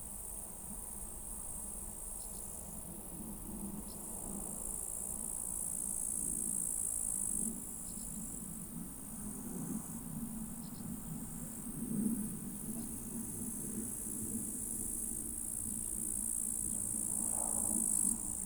Lamkowo, Łąka - Summer meadow near Lamkowo
Grass, wind, crickets, plane.